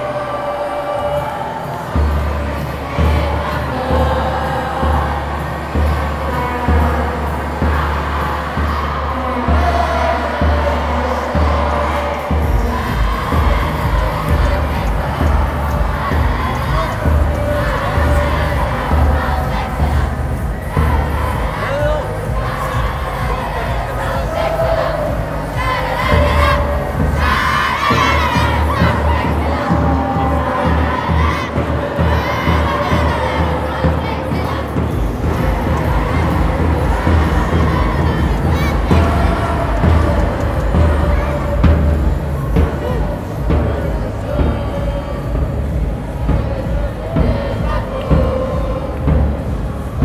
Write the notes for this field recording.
Extremely loud shoutings from scouts, at the end of a very big race called K8strax.